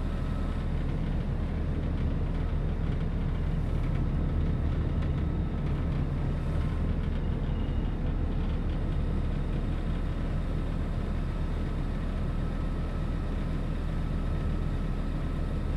{
  "title": "Silodam, Amsterdam, Nederland - Wasted Sound Ferry",
  "date": "2019-11-06 12:36:00",
  "description": "Wasted GTA\n‘‘And because the character is always middle aged, it’s referring to the life that could have been and now stops to exist. So, it doesn’t necessary reflect on the life that existed but to the life that could have existed but now will never exist.’’",
  "latitude": "52.39",
  "longitude": "4.89",
  "timezone": "Europe/Amsterdam"
}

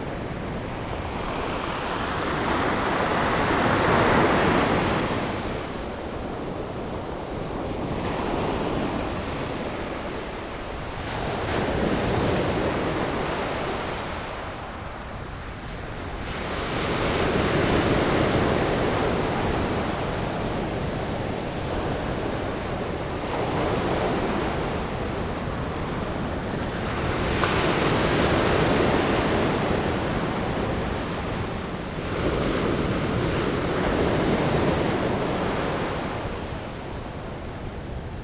{
  "title": "新城村, Xincheng Township - Sound of the waves",
  "date": "2014-08-27 12:00:00",
  "description": "Sound of the waves, The weather is very hot\nZoom H6 MS+Rode NT4",
  "latitude": "24.12",
  "longitude": "121.66",
  "altitude": "8",
  "timezone": "Asia/Taipei"
}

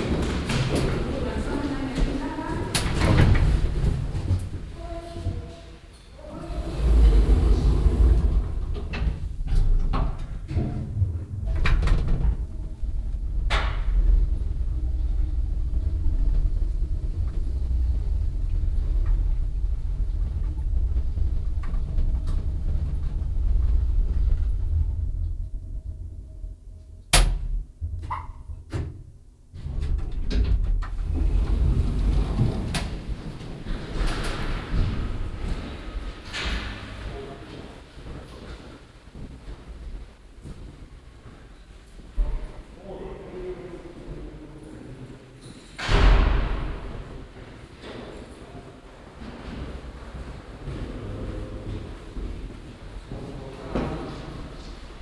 {
  "title": "mettmann, neanderstrasse, rathaus",
  "description": "aufnahme im rathaus, morgens, schritte in fluren, türen, stimmen, aufzugfahrt\n- soundmap nrw\nproject: social ambiences/ listen to the people - in & outdoor nearfield recordings",
  "latitude": "51.25",
  "longitude": "6.97",
  "altitude": "135",
  "timezone": "GMT+1"
}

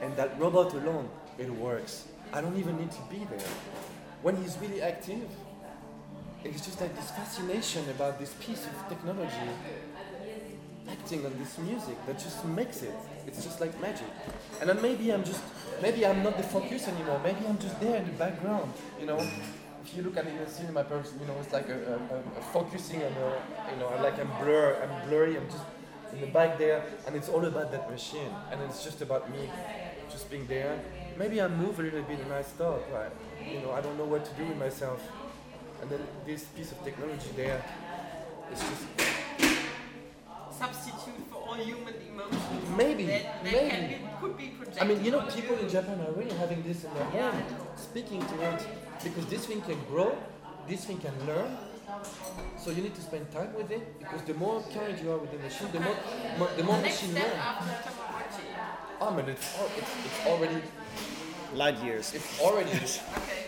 {
  "title": "Düsseldorf, Flingern, Ackerstr, Cafe Record, artist conversation - düsseldorf, flingern, ackerstr, cafe record, artist conversation",
  "date": "2009-06-19 12:21:00",
  "description": "artist conversation while coffe machine and dish sounds in the cafe\nsoundmap nrw: social ambiences/ listen to the people in & outdoor topographic field recordings",
  "latitude": "51.23",
  "longitude": "6.81",
  "altitude": "48",
  "timezone": "Europe/Berlin"
}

